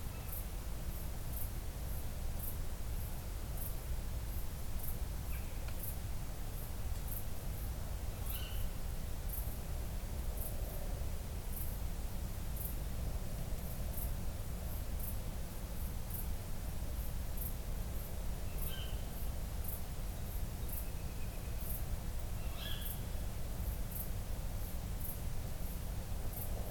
Coley Lane, Chilbolton, Stockbridge, UK - Midnight summer - tawny owls and crickets
Full moon rise on this quiet english town. Its midsummer and quite hot. You can here the owls echoing through the landscape.
Hampshire, England, United Kingdom, 10 August, 23:50